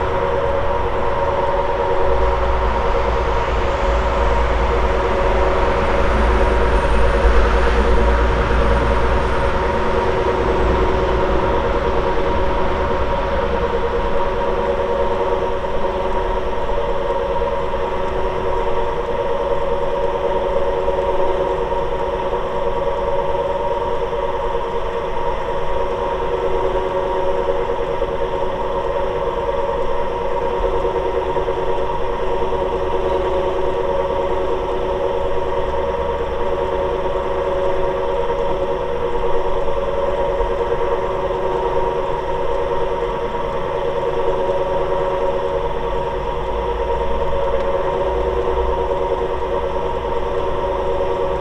Umeå, Reklam AB Hemvagen, ventilation duct
Umeå Municipality, Sweden